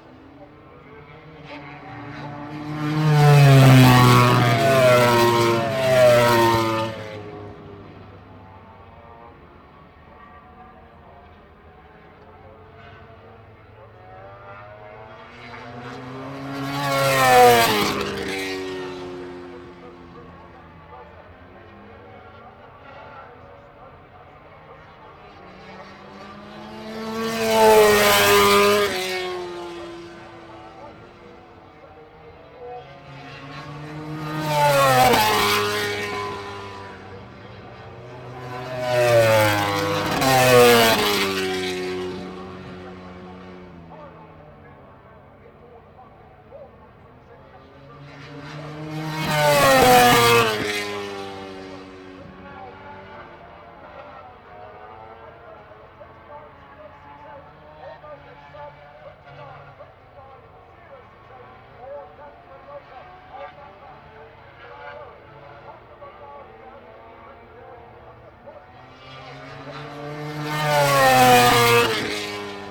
Derby, UK - british motorcycle grand prix 2006 ... motogp free practice 2 ...
british motorcycle grand prix 2006 ... motogp free practice 2 ... one point stereo to minidisk ... commentary ...
30 June 2006, 14:00